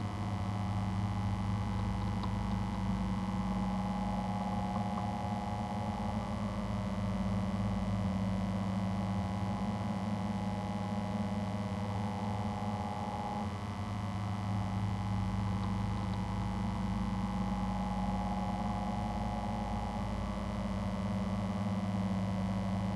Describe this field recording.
fan on wood, (zoom h2, contact mic)